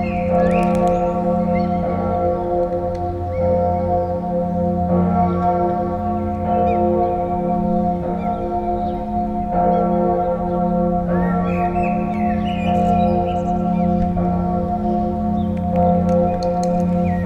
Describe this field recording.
Hauptkirche St. Michaelis. The very good and pleasant bell of this Lutheran church, ringing at 10AM. Into the park, song of a blackbird and pedestrians walking onto the gravels.